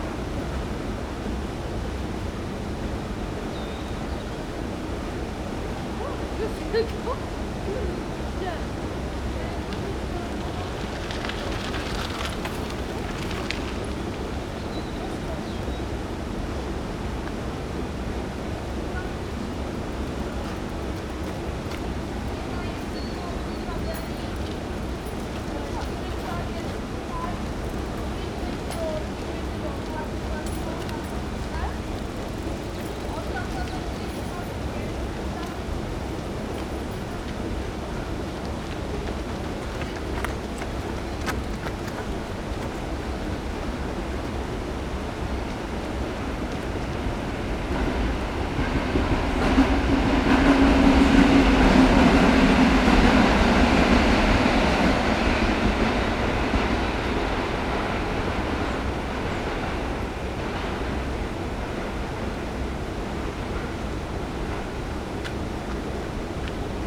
Poznan, Golecin district, at Rusalka lake - water outlet
recording at a concrete structure - a housing for a large water outlet. water from the lake drains at that place. the gush of water was recorded at the back of the structure thus it sounds as if it was low pass filtered. it creates nice, full drone that spreads around the area. also voices of nearby strollers, runners, bikes, playing kids, trains and myriads of birds.